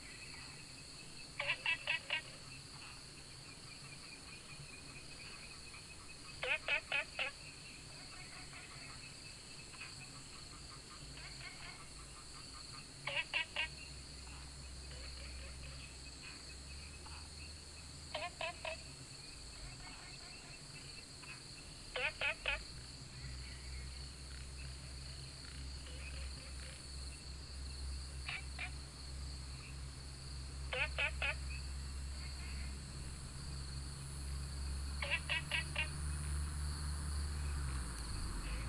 Taomi Ln., Puli Township - Night hamlet
Frogs chirping, Night hamlet, Insects called
Puli Township, 桃米巷9-3號, 10 August 2015